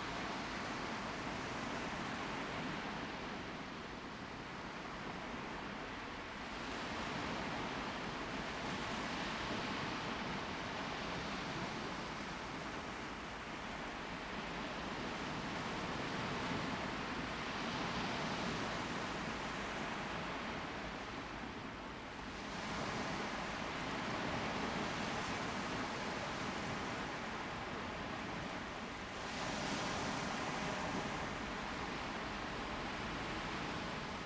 濱海林蔭大道, Xinwu Dist., Taoyuan City - Late at night on the beach
Late night beach, Sound of the waves, Zoom H2n MS+XY
Taoyuan City, Taiwan, September 2017